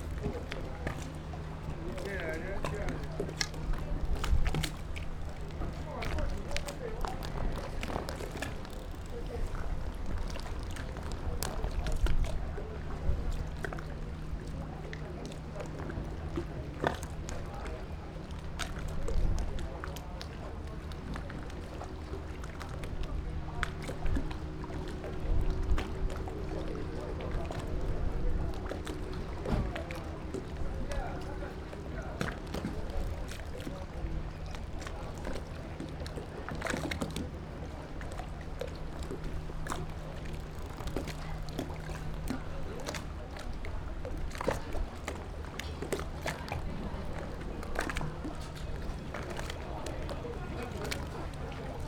赤崁遊客碼頭, Baisha Township - In the dock
In the dock
Zoom H6 +Rode NT4
Penghu County, Baisha Township